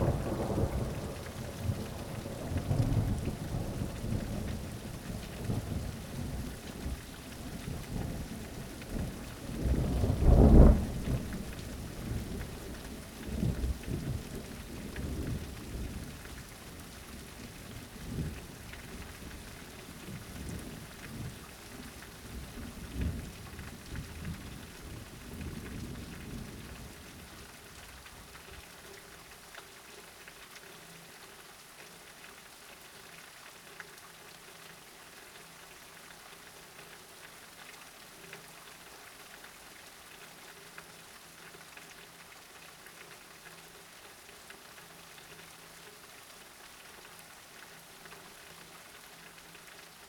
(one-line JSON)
{"title": "Mountshannon, Co. Limerick, Ireland - Thunderstorm", "date": "2013-07-28 16:15:00", "description": "intense thunderstorm with rain, wind, lightening and thunder.", "latitude": "52.68", "longitude": "-8.52", "altitude": "19", "timezone": "Europe/Dublin"}